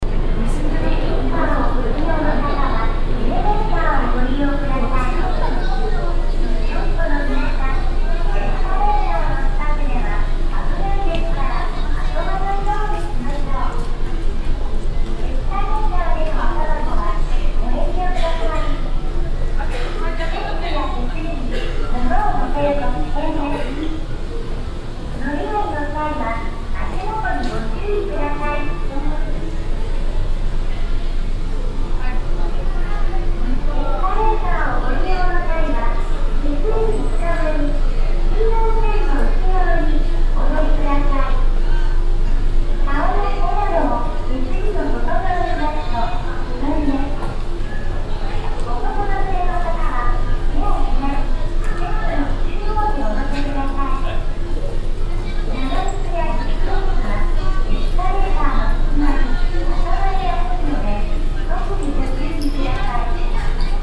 yokohama, colette mare, sale
Inside the shopping mall called colette mare. The sounds of different japanese female voices offering products and people who are shopping.
international city scapes - topographic field recordings and social ambiences
Japan, 29 June 2011, ~22:00